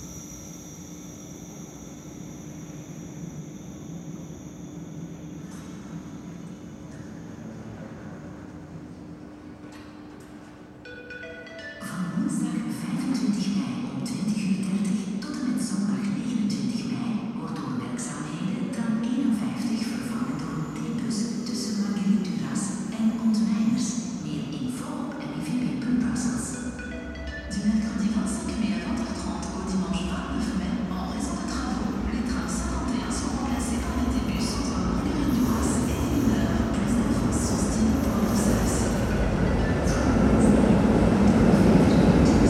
Tram 51 (old model), 3 & 4 (new models) at the station, voice announcements.
Tech Note : Olympus LS5 internal microphones.